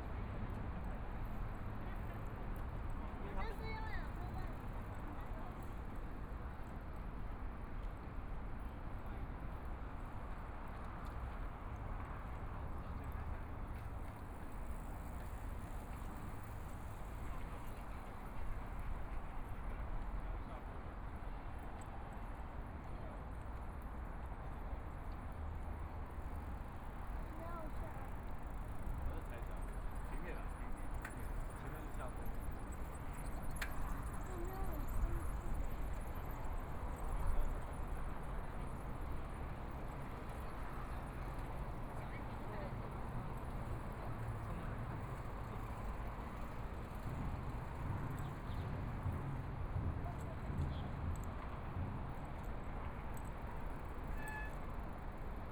中山區新庄里, Taipei City - Riverside Park
Walking along the river, Pedestrian, Traffic Sound, A lot of people riding bicycles through
Binaural recordings, ( Proposal to turn up the volume )
Zoom H4n+ Soundman OKM II
Taipei City, 汐止五股高架段, 16 February 2014, 16:24